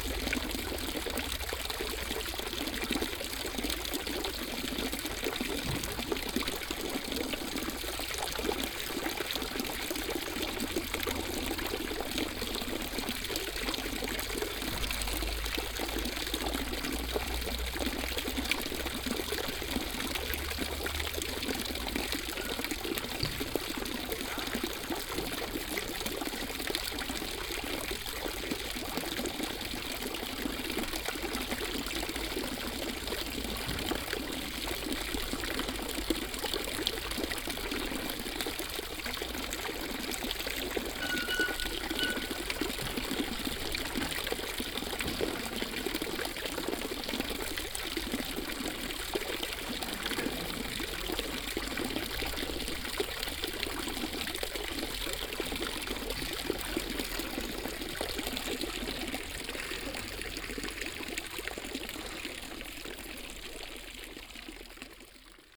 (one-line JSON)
{"title": "rudolstadt, market street, fountain", "date": "2011-10-06 14:17:00", "description": "At one of the many street fountains in the village site. The sound of the dripping water. In the background two young bmx cyclists trying some driving tricks.\nsoundmap d - topographic field recordings and social ambiences", "latitude": "50.72", "longitude": "11.34", "altitude": "197", "timezone": "Europe/Berlin"}